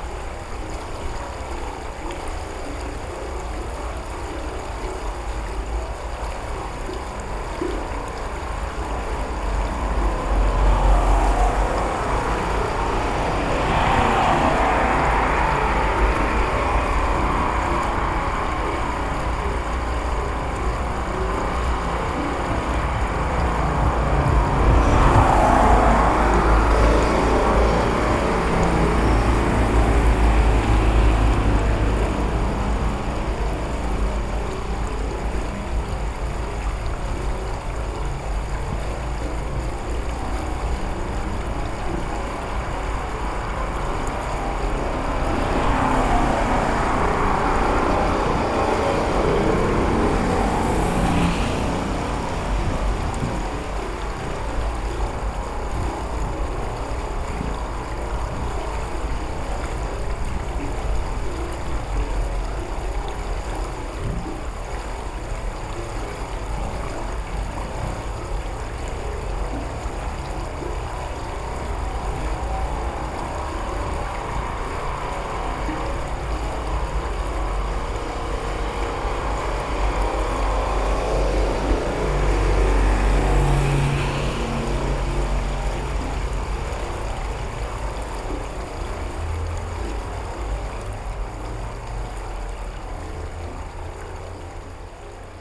Utena, Lithuania. inside the railings
small microphones pkaced inside the railings pipe. strange warbled resonances
27 November 2020, ~18:00